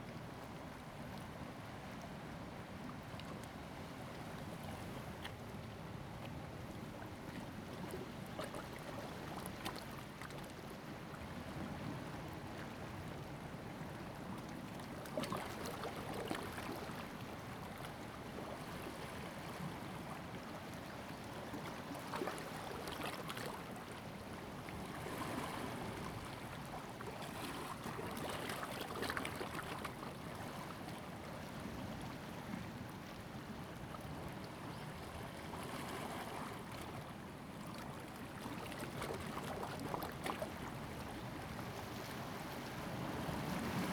八代灣, Koto island - Tide and Wave
Hiding inside Rocks, Tide and Wave
Zoom H2n MS+XY
29 October 2014, ~17:00